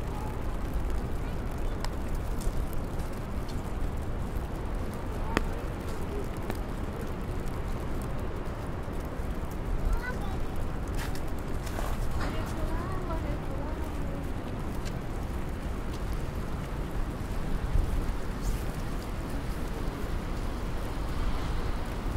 Ave, New York, NY, USA - cold winter